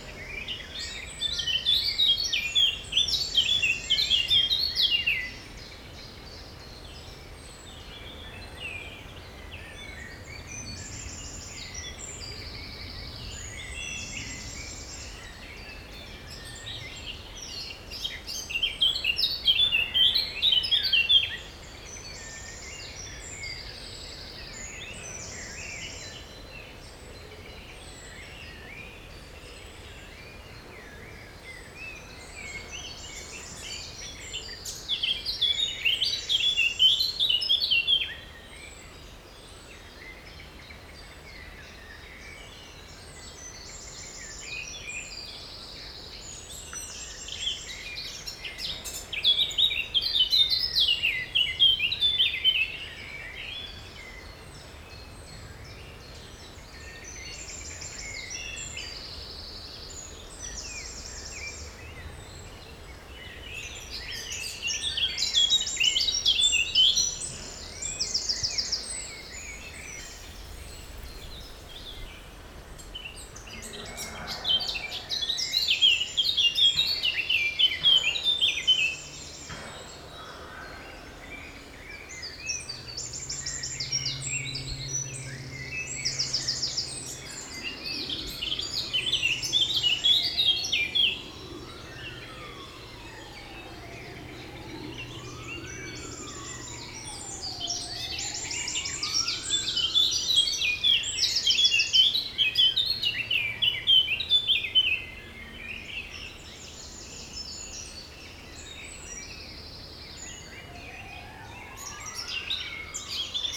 Binche, Belgium - On the spoil pile
On the huge spoil pile, Eurasian Blackcap concerto. Also people working in their home with a small bulldozer, massive planes coming from Charleroi airport and police driving on the road. If listening with an helmet, a special visitor on 19:21 mn ;-)
10 May, 11:45